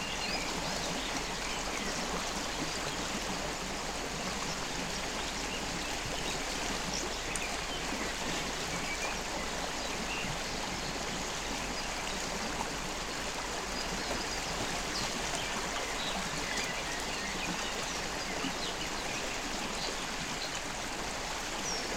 Lithuania, river Sventoji
the spot where river Sventoji meets with river Vyzuona
Anykščių rajono savivaldybė, Utenos apskritis, Lietuva, May 2020